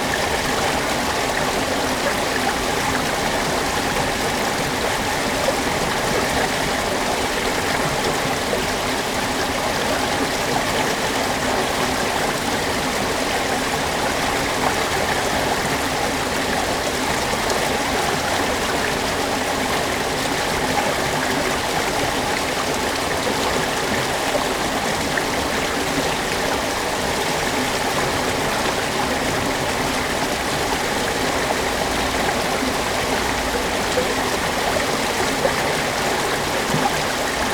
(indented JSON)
{
  "title": "Rowsley, UK - Caudwell Mill ...",
  "date": "2016-11-03 06:30:00",
  "description": "Mill race ... Caudwell Mill ... Rowsley ... lavalier mics clipped to clothes pegs ... fastened to sandwich box ...",
  "latitude": "53.19",
  "longitude": "-1.62",
  "altitude": "103",
  "timezone": "Europe/London"
}